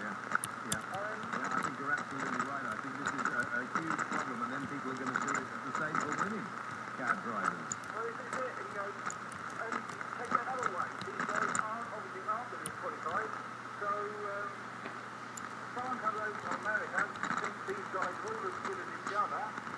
anywhere but in the center